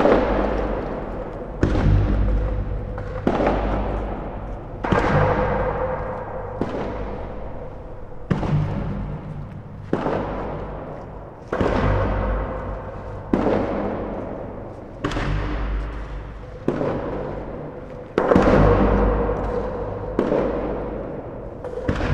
Seaplane Hangar, Lukas form+sound workshop
sonification of the historic Seaplane hangar during the form+sound workshop of Lukas Kühne